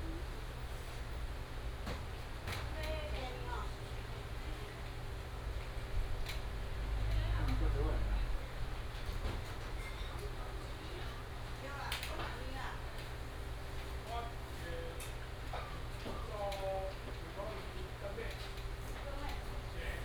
Zhongzheng Rd., Baozhong Township - Old little restaurant
Old little restaurant